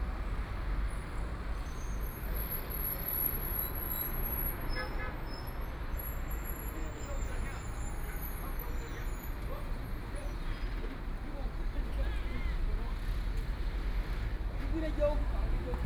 South Xizang Road, Shanghai - In front of the Convenience stores
In front of the Convenience stores, Traffic Sound, Various brake sounds, Binaural recording, Zoom H6+ Soundman OKM II
Shanghai, China